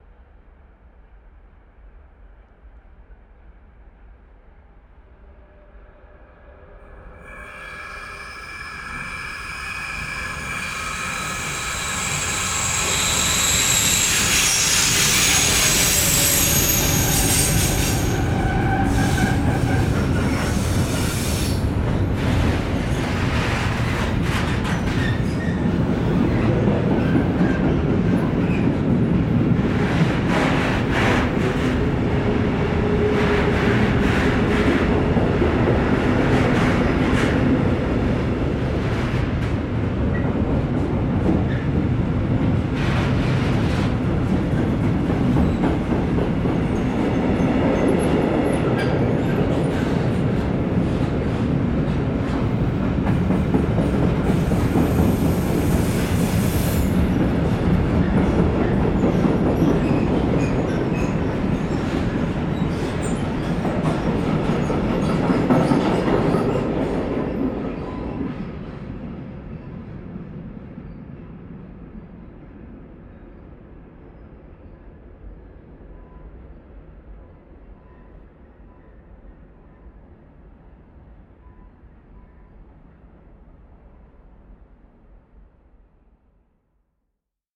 Bahnhofspl., Hameln, Germany FREIGHT TRAIN, Train Station, HAMELN - FREIGHT TRAIN, Train Station, Hameln, Germany
Passenger Train sound recorded on the train station in Hameln.
TASCAM DR100-MK3
LOM MikroUSI Microphones
Landkreis Hameln-Pyrmont, Niedersachsen, Deutschland, 24 April 2021